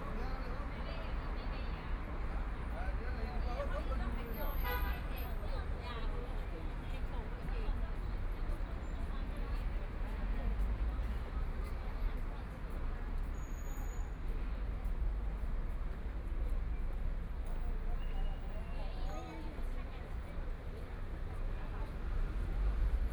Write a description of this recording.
Sitting on the roadside, outside of the coffee shop, The Bund (Wai Tan), The pedestrian, Traffic Sound, Binaural recording, Zoom H6+ Soundman OKM II